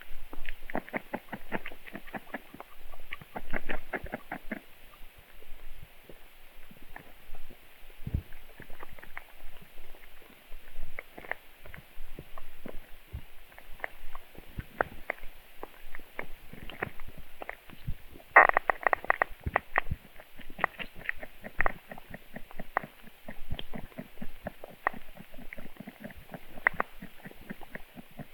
young tadpoles churning/chewing in a swamp. hydrophone recording. very silent sounds, so recording gain was set to maximum - there's a lot of hiss, even on Sound Devices...